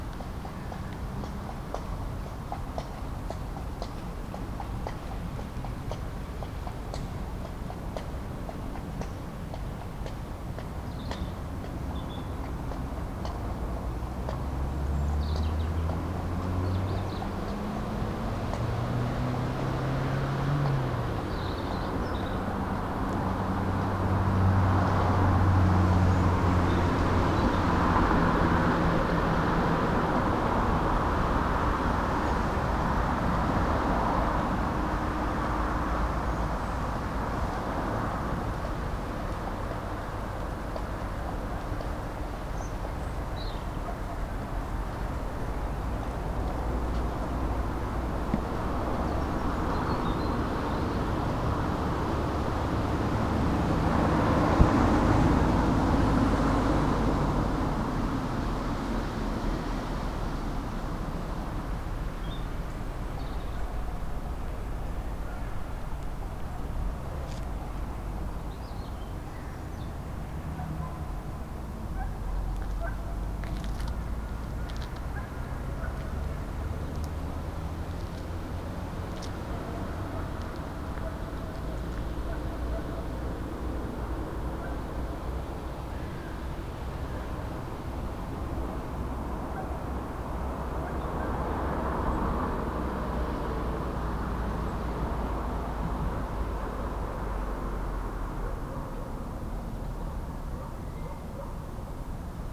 remscheid, kräwinklerbrücke: parkplatz - the city, the country & me: parking
rider on a horse, cars passing by, barking dogs
the city, the country & me: november 10, 2013